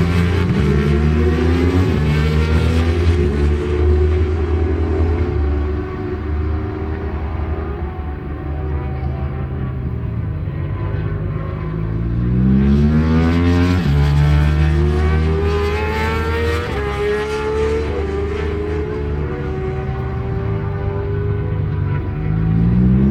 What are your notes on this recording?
British Superbikes 2000 ... warm-up ... Snetterton ... one point stereo mic to minidisk ...